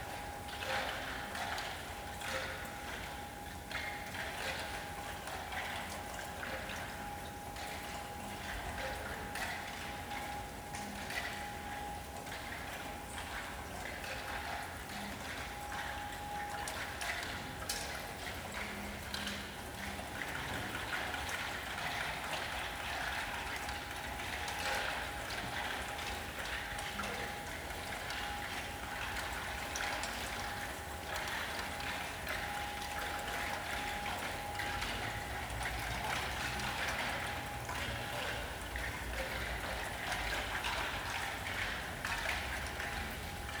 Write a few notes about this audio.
Although very sunny in this period the weather stayed cold and today it even snowed gently for a short time. The flakes immediately melted on touching the roof and the water dripped rhythmically down the building knocking into the metal window sills on the way. This is the main sound. The building was partially renovated two years ago and all the stone sills were covered with galvanised zinc. It's made quite difference to the soundscape of the Hinterhof when it rains (or snows). The continuous tone is made by the heating system and is the local soundmark that plays often but quite unpredictably.